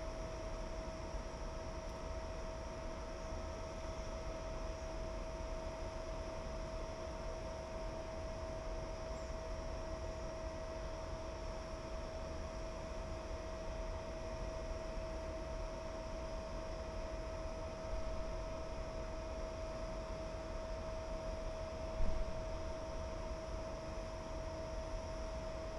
Trg 15. aprila, Divača, Slovenia - Passenger and cargo trains
Passenger and cargo trains on railway Divača, Slovenia. Recorded with Lom Uši Pro, MixPre II.
Upravna enota Sežana, Slovenija, October 2020